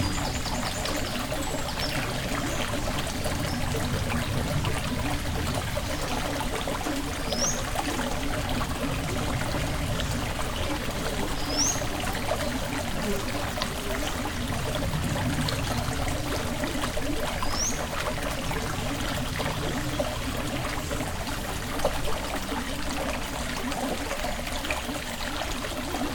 Veuvey-sur-Ouche, France - Veuvey mill
In the Veuvey mill, water is flowing quietly. It's a sunny and pleasant day near this small river.